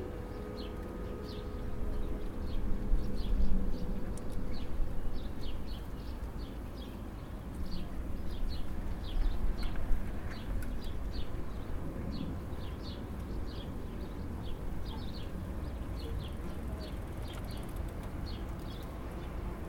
Pont routier dit pont suspendu de Seyssel ou pont de la Vierge noire, Seyssel, France - Midi tapante
Au milieu du vieux pont de Seyssel pour une pêche magnétique avec deux cubes néodyme au bout de fil inox 0,2, but récupération d'une pièce en caoutchouc située quelques mètres en contre-bas, pour Stéphane Marin, c'est un exemple de sérendipité, la cloche de l'église de la Haute-Savoie sonne midi, le son se répercute sur les façades de l'Ain de l'autre côté du Rhône, le son réfléchi est plus fort que le son source, c'est dû à la position du ZoomH4npro, passage d'un groupe de motards et vers la fin on peut entendre la rencontre des deux aimants qui viennent pincer la pièce à récupérer.
France métropolitaine, France, 17 July 2022